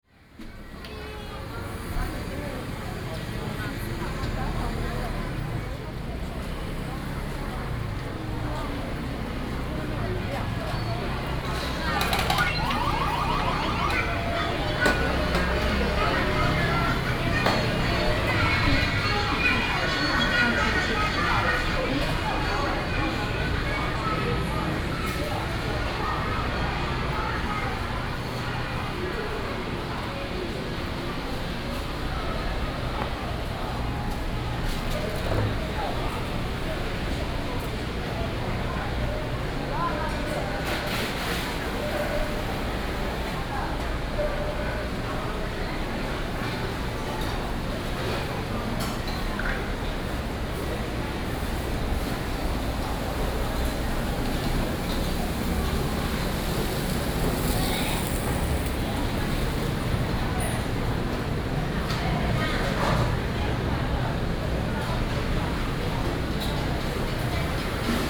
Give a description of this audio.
Night market, In the bridge of the night market, Traffic Sound, Very hot weather